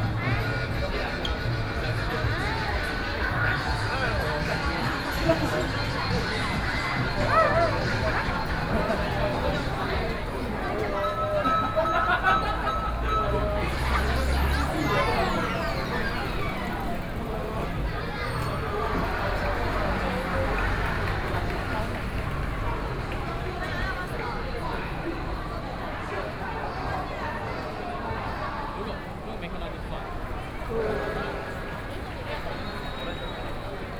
Sec., Wuchang St., Taipei City - walking in the Street
Aggregation street theater, Hip-hop competitions, The crowd, Binaural recordings, Sony PCM D50 + Soundman OKM II
Taipei City, Wanhua District, 武昌街二段106號, 19 October 2013